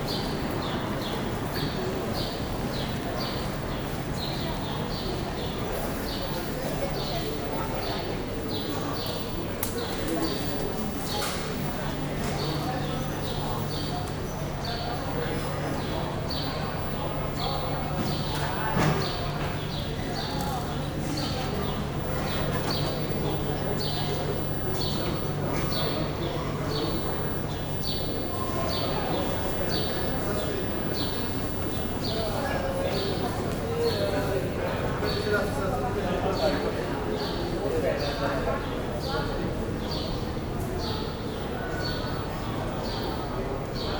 nizza, airport, terminal 1, departure gates & shopping zone
tweeting small birds in the departure zone of the airport, some announcements
soundmap international: social ambiences/ listen to the people in & outdoor topographic field recordings
28 July